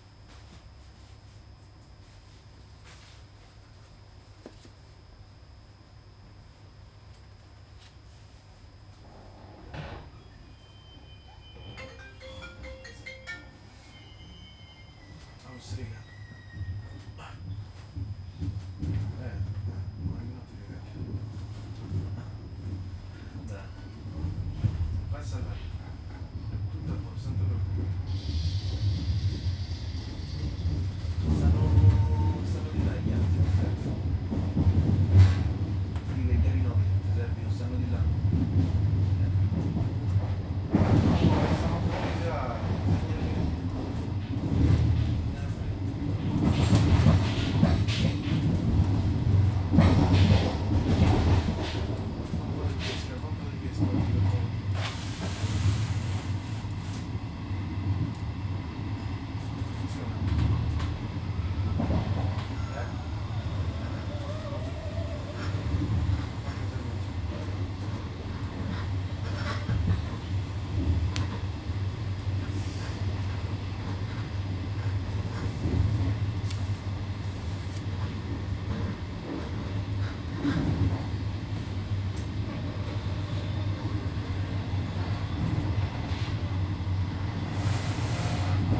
Roma Termini, Roma RM, Italien - Regionale Veloce - Roma Termini (15:00)
train sounds. starting and stopping. people chatting. mobile phones ringing. the start of a journey.
Roma RM, Italy, 14 October 2018, 15:00